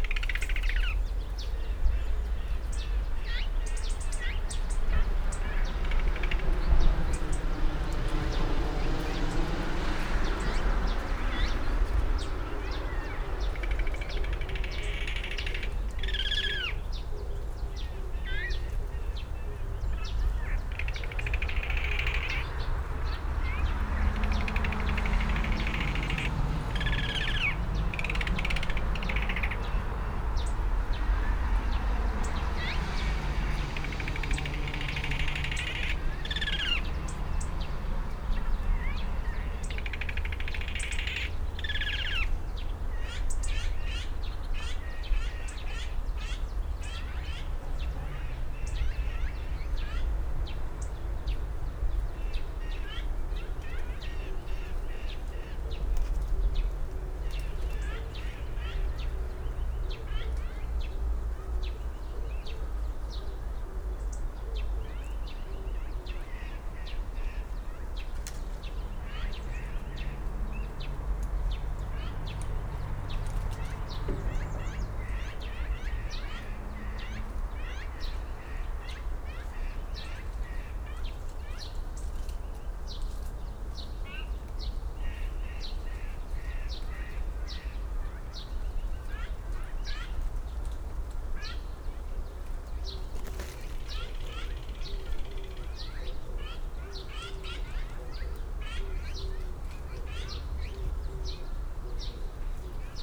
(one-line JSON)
{"title": "Taegyae Coppice 물가치들 (Azure Magpie colony)", "date": "2020-04-10 09:00:00", "description": "Azure Magpies congregate in large groups around this part of Anma-san...there is a remnant coppice remaining on flat land at the foot of the steep hillside, despite much clearing of land in the surrounding area over these last few years...the coppice provides slightly different habitat than the nearby wild hillside, and there is a lot of protection, privacy and grazing for these birds...perhaps they nest in this area of trees...the voices of these Azure Magpies are distinct from the white/black Asian Magpies, and has an interesting noisy rythymic energy...", "latitude": "37.85", "longitude": "127.75", "altitude": "117", "timezone": "Asia/Seoul"}